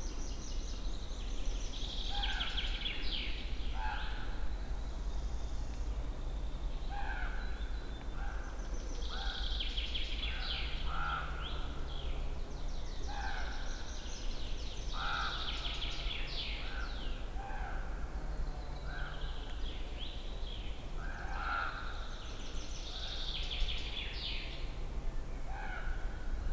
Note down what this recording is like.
(binaural) forest ambience. crows chasing each other and yapping. rumble of incoming storm. sound of a chain saw far away. (sony d50 + luhd pm01bins)